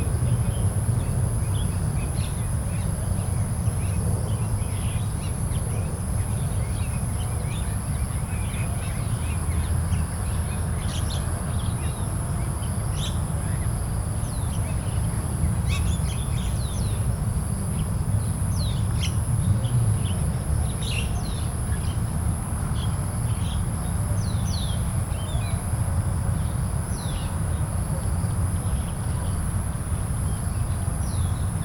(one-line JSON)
{"title": "Jalan Puteri Hang Li Poh, Kampung Bukit China, Melaka, Malaysia - Evening in Bukit Cina", "date": "2017-10-13 18:53:00", "description": "The recording is set in an old cemetery and the recorder is facing the many trees while the birds is making sounds. Lots of mosquitoes.", "latitude": "2.20", "longitude": "102.26", "altitude": "25", "timezone": "Asia/Kuala_Lumpur"}